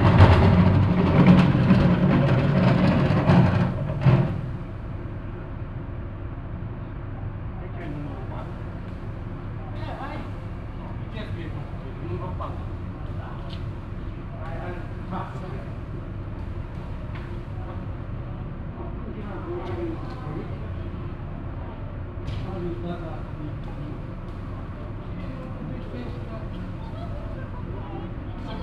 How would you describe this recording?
At an old cobble stone street inside the old town of Cluj in the night time. The sound of garbage waggons being rolled from one to the other side of the street. soundmap Cluj- topographic field recordings and social ambiences